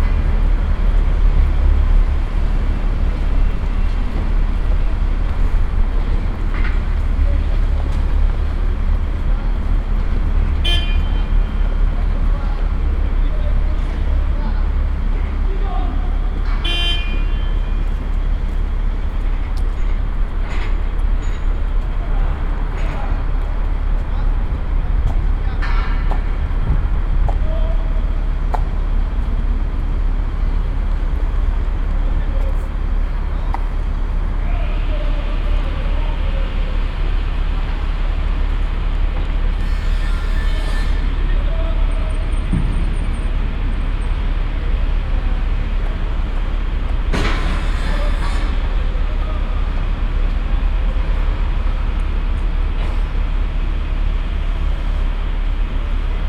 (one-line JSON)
{"title": "Brussels, Mont des Arts, the chimes / Le Carillon", "date": "2008-07-24 09:31:00", "description": "Brussels, Mont des Arts, the chimes.\nBruxlles, le carillon du Mont des Arts.", "latitude": "50.84", "longitude": "4.36", "altitude": "53", "timezone": "Europe/Brussels"}